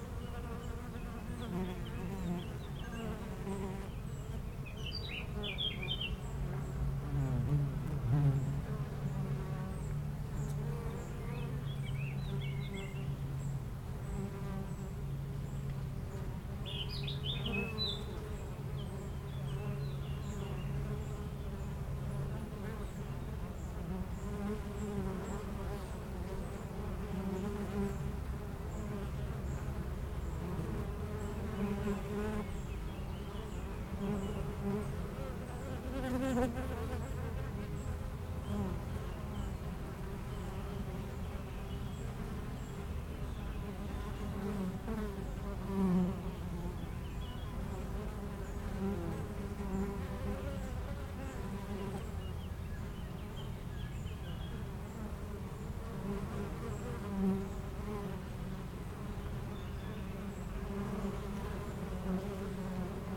Chem. de Memard, Aix-les-Bains, France - Les abeilles
Entre deux ruches du rucher du jardin vagabond à Aix-les-bains les abeilles sont en pleine collecte et font d'incessants aller retour vers les fleurs, quelques oiseaux dans le bois voisin.
Auvergne-Rhône-Alpes, France métropolitaine, France